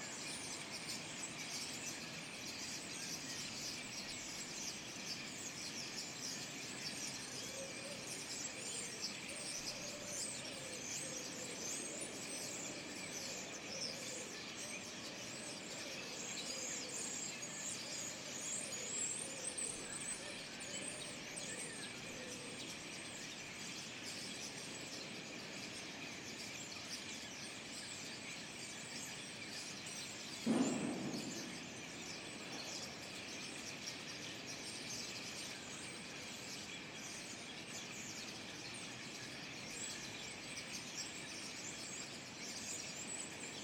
Kortenbos, Den Haag, Nederland - Starlings gathering
Large group of starlings gather in the trees.
Zoom H2 Internal mics.